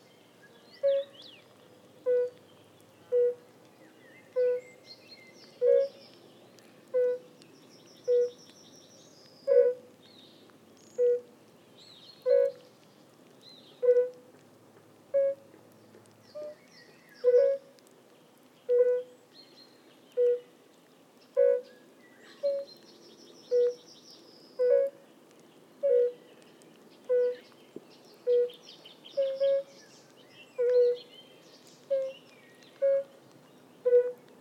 a pair of fire-bellied toads(Bombina bombina) singing.